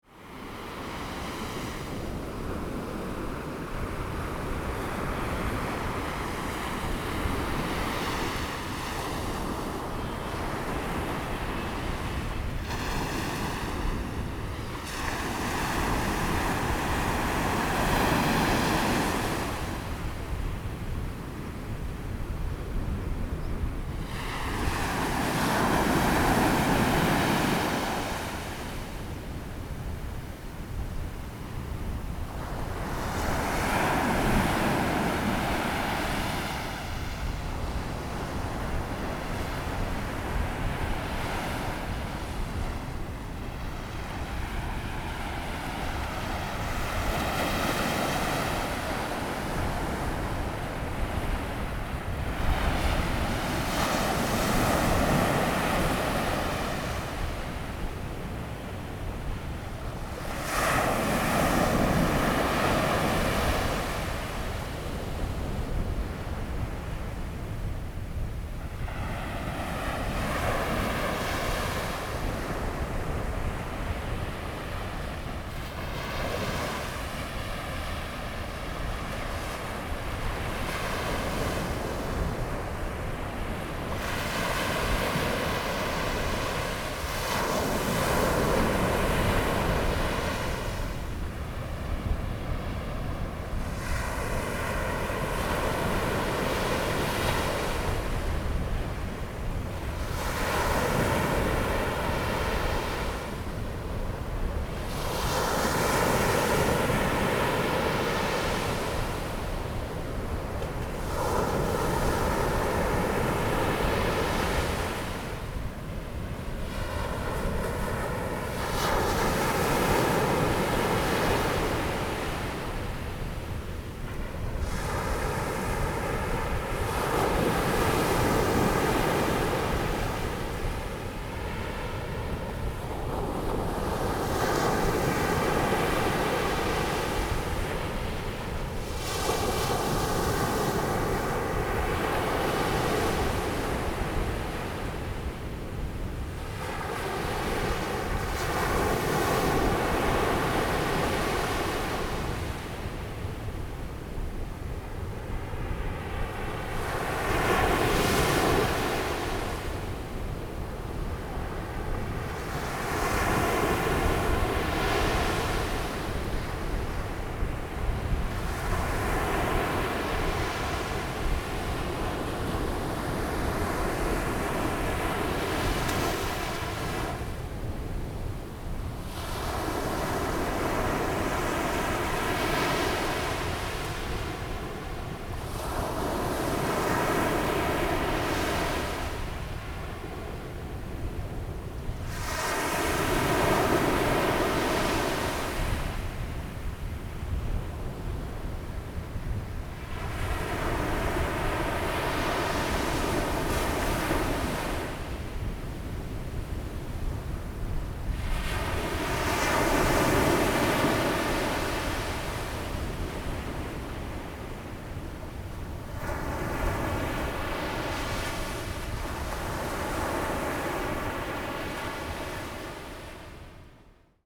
{
  "title": "喜南里, South Dist., Tainan City - on the beach",
  "date": "2017-02-18 12:32:00",
  "description": "The wind is great on the beach, Sound of the waves\nZoom H2n MS+XY",
  "latitude": "22.94",
  "longitude": "120.18",
  "altitude": "2",
  "timezone": "GMT+1"
}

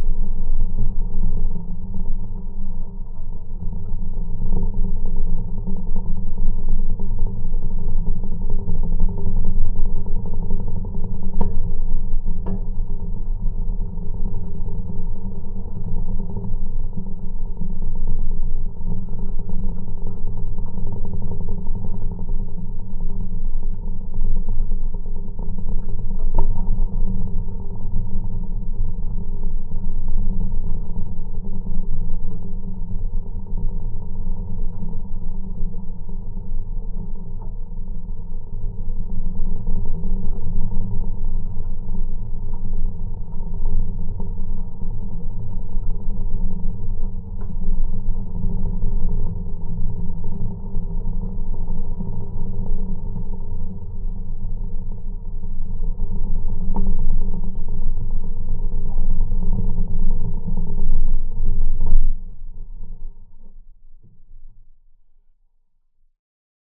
{
  "title": "Bagdonys, Lithuania, ferry",
  "date": "2022-09-03 16:10:00",
  "description": "little, hand-driven, ferry to island. geophone on metallic construction at the fence",
  "latitude": "55.90",
  "longitude": "25.01",
  "altitude": "77",
  "timezone": "Europe/Riga"
}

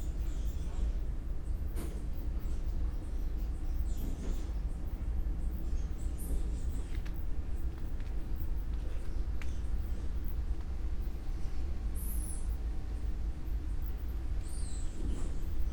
{
  "title": "Vicolo dei Calafai, Trieste, Italy - near Comando Militare",
  "date": "2013-09-08 23:40:00",
  "description": "night creatures and their doings",
  "latitude": "45.64",
  "longitude": "13.76",
  "altitude": "52",
  "timezone": "Europe/Rome"
}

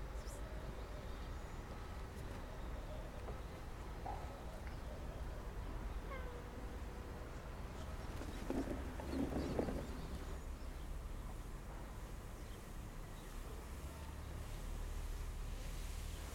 {
  "title": "מגאר ג/מרכז, מעאר, ישראל - Narmeen audio",
  "date": "2021-04-08 13:55:00",
  "latitude": "32.89",
  "longitude": "35.41",
  "altitude": "264",
  "timezone": "Asia/Jerusalem"
}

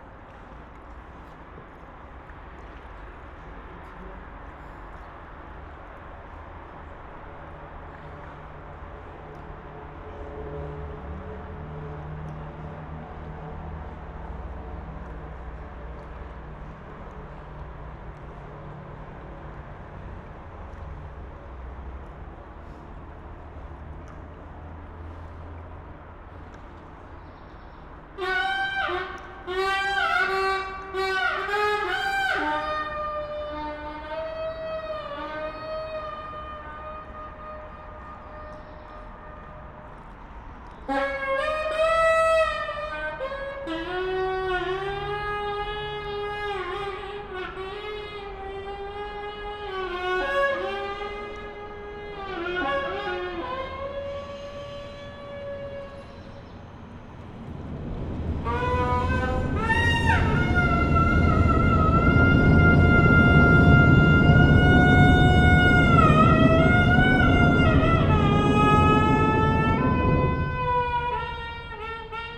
{
  "title": "Friedrichshain, Berlin, Elsenbrücke - sax player under bridge",
  "date": "2012-04-22 17:50:00",
  "description": "Berlin, Elsenbrücke, a lonely saxophon player practising under the bridge.\n(tech note: SD702, Audio Technica BP4025)",
  "latitude": "52.50",
  "longitude": "13.46",
  "altitude": "31",
  "timezone": "Europe/Berlin"
}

